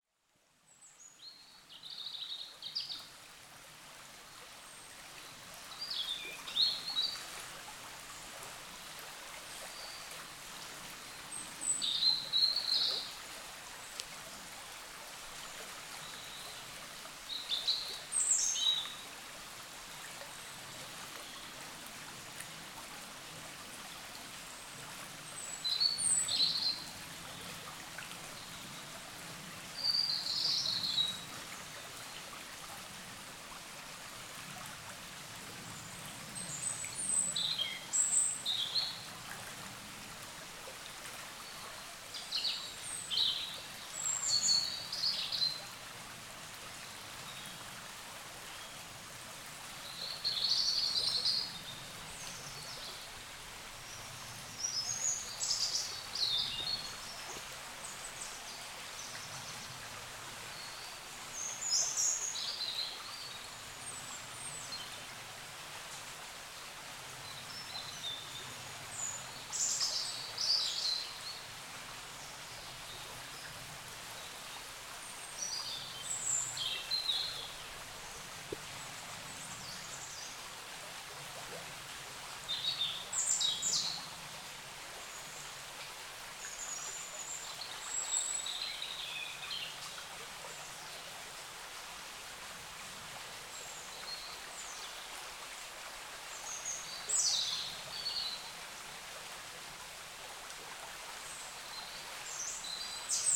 Carennac - Oiseaux de la Dordogne
A la sortie de Carennac, le long d'un petit bras de la Dordogne, les oiseaux dans la forêt
Zoom H5 + XYH-5